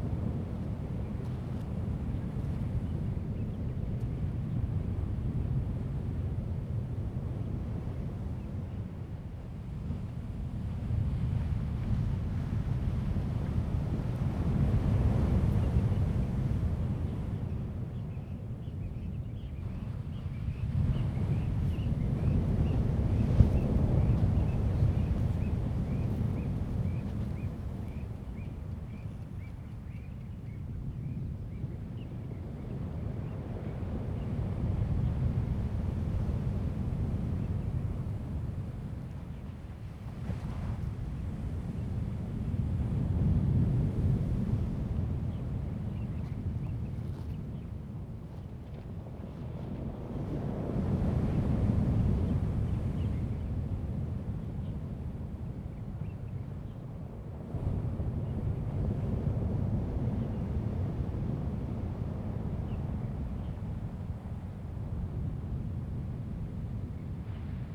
Nantian Coast Water Park, 台東縣達仁鄉台26線 - the waves
At the beach, Sound of the waves, Hiding behind the stone area, Birds
Zoom H2n MS+XY
28 March 2018, ~09:00